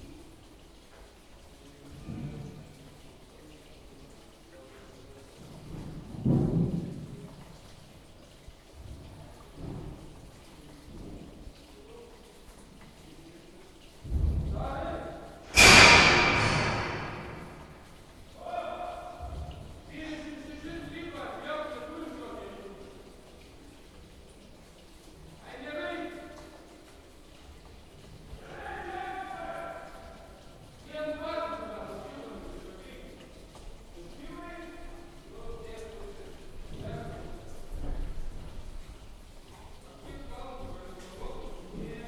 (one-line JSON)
{"title": "Utena, Lithuania, in a cellar of cultural center", "date": "2012-07-18 15:30:00", "description": "in a cellar of cultural center, rain ouside and builders above...", "latitude": "55.51", "longitude": "25.60", "altitude": "110", "timezone": "Europe/Vilnius"}